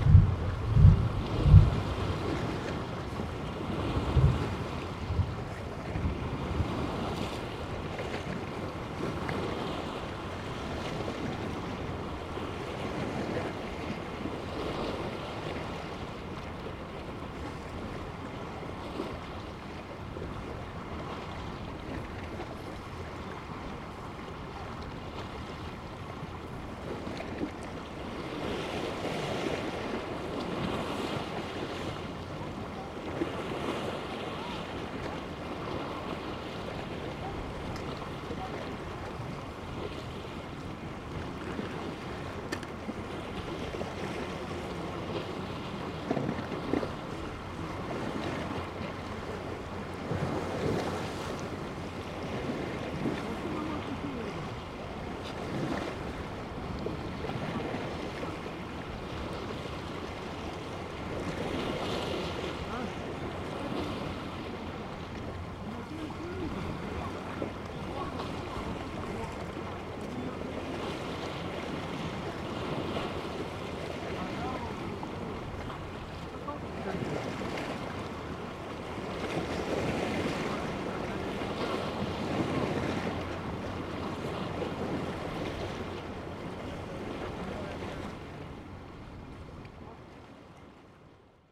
Ventė Cape, Lithuania, on a pier
On a pier of Ventė Cape. Waves and passengers...
Klaipėdos apskritis, Lietuva